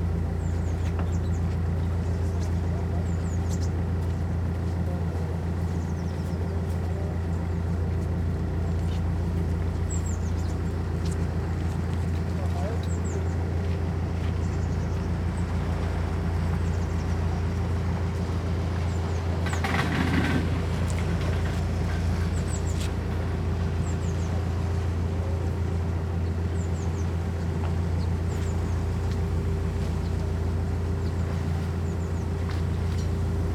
berlin wall route, plaueninsel ferry in the afternoon, 30/08/09